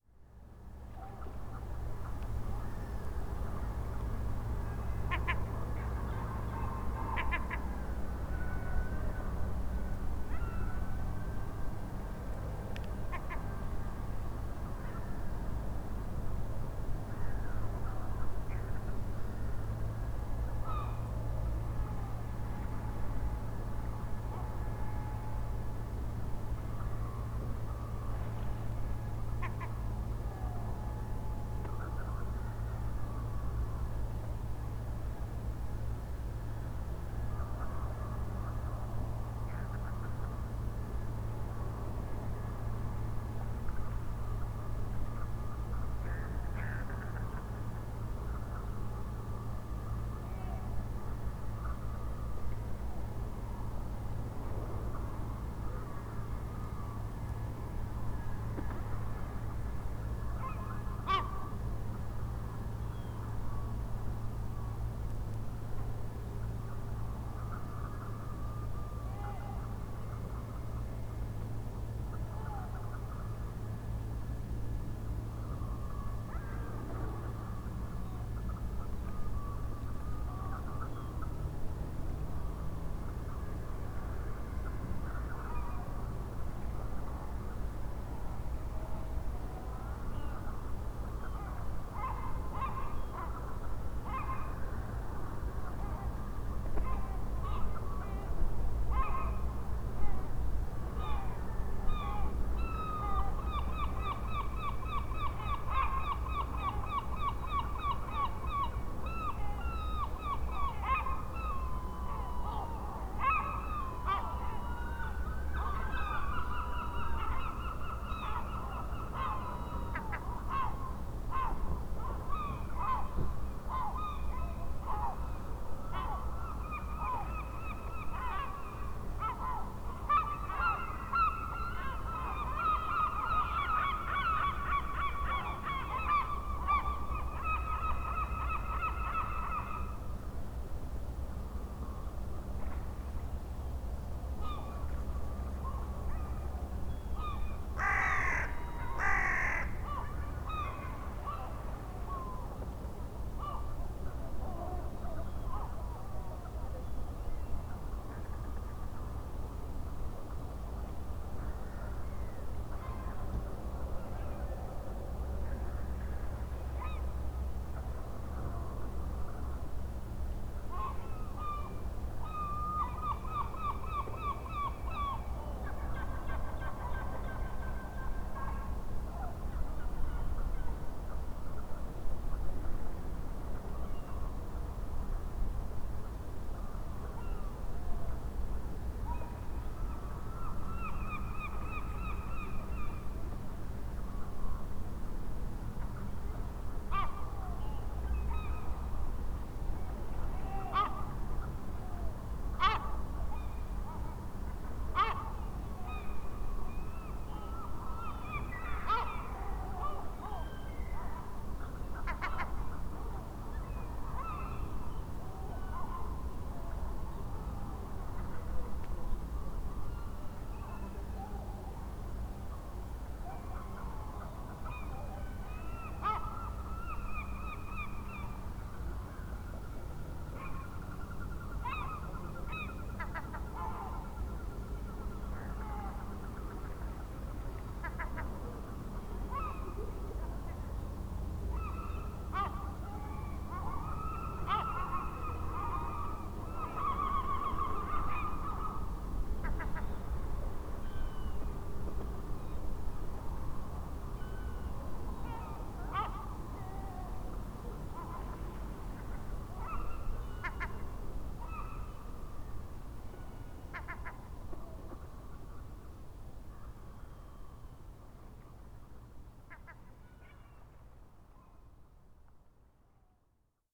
gager: hafen - the city, the country & me: harbour
recorded on landing stage in the port; seagulls and other busy birds
the city, the country & me: march 6, 2013
6 March 2013, ~17:00